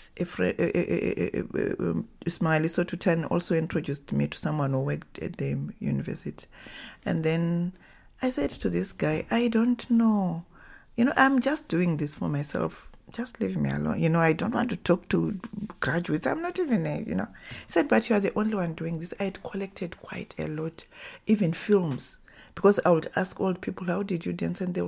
Joyce Makwenda's Office, Sentosa, Harare, Zimbabwe - Joyce Makwenda’s passion for music, research, collecting…
We are in Joyce Makwenda’s office which houses two rooms with her collection gathered in a life of creative production and research. She tells us how her passion for the arts, for listening to stories and for collecting initiates her into yet unknown activities like writing and filmmaking. Towards the end of the interview, she poignantly says, “it’s good we are part of a global culture and what not; but what do we bring to that global village…?”
Find the complete recording with Joyce Makwenda here:
Joyce Jenje Makwenda is a writer, filmmaker, researcher, lecturer and women’s rights activist; known for her book, film and TV series “Zimbabwe Township Music”.